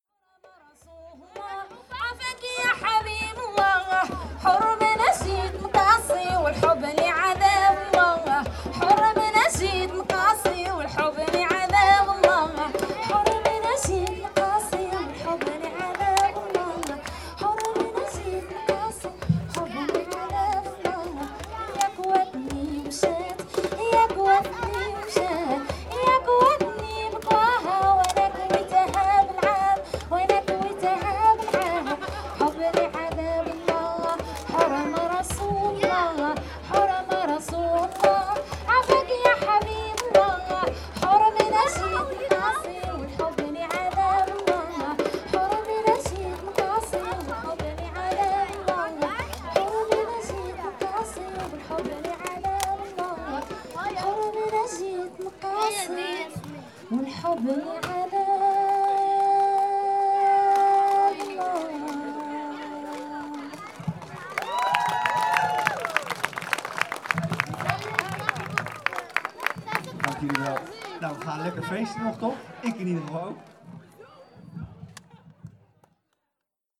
Jens en zijn vrouw tijdens Buurtfeest De verademing
Segbroek, The Netherlands, 12 May 2012